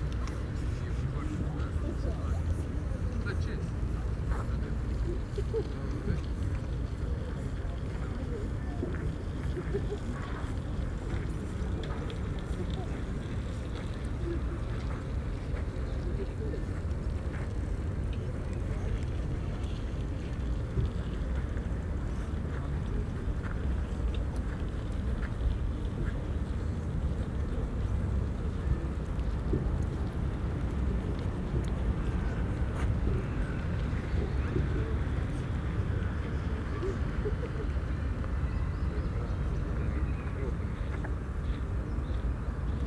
Ljubljanica-Grubarjev prekop, Ljubljana - at the rivers, ambience
Ljubljanica river meet Grubarjev canal, ambience
(Sony PCM D50, DPA4060)